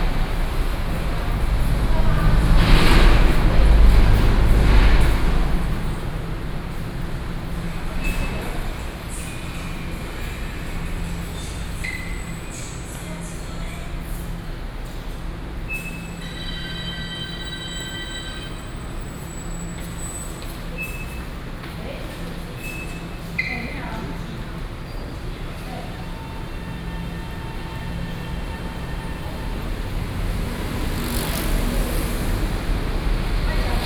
Neili Station, Taoyuan - Station hall
Station hall, Broadcast station message, Sony PCM D50 + Soundman OKM II